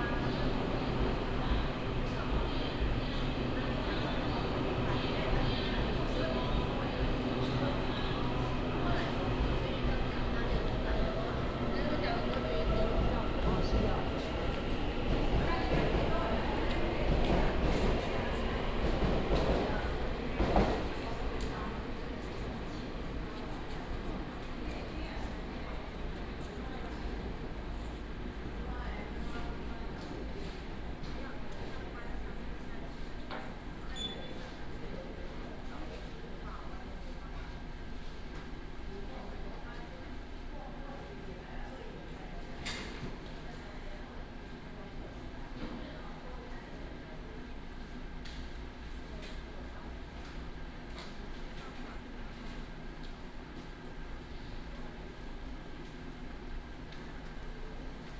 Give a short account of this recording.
In the station hall, lunar New Year, traffic sound, The train passed, Binaural recordings, Sony PCM D100+ Soundman OKM II